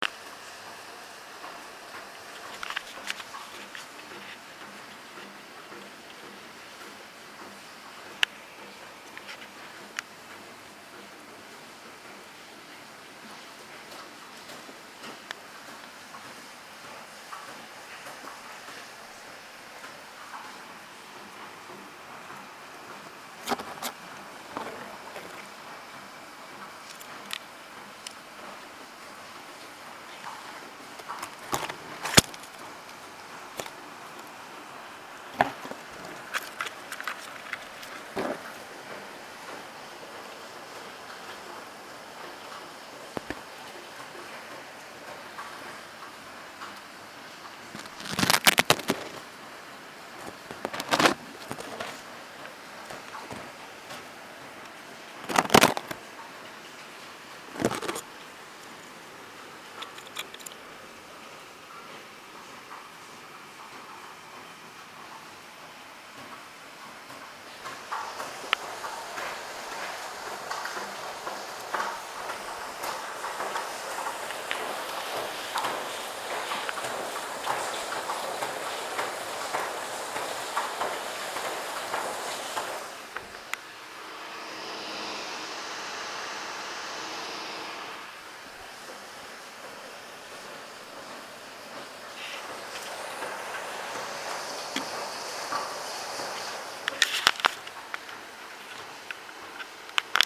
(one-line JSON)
{"title": "Düstere Str., Göttingen, Germany - Sound of rain recorded from window", "date": "2020-08-28 23:22:00", "description": "Recorder: SONY IC recorder, ICD-PX333\nRecorded at the window in front of my desk. The recorder was moved among plants. It touched leaves every now and then.", "latitude": "51.53", "longitude": "9.93", "altitude": "151", "timezone": "Europe/Berlin"}